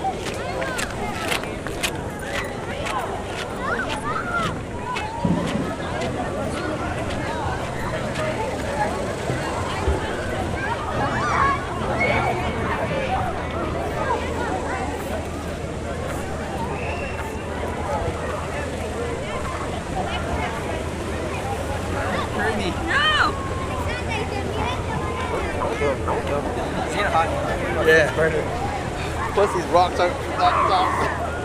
Barton Springs, Saturday Afternoon
Barton Springs, Saturday Evening, so many people, leisure, Field, Crowds